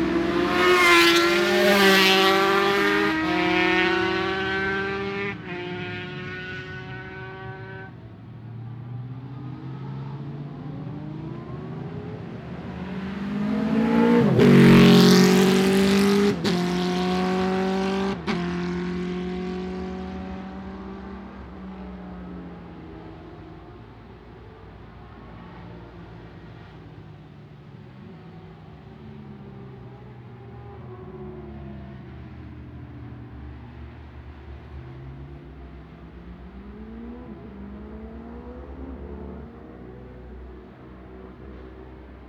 Jacksons Ln, Scarborough, UK - barry sheene classic 2009 ... practice ...
barry sheene classic 2009 ... practice ... one point stereo mic to minidisk ...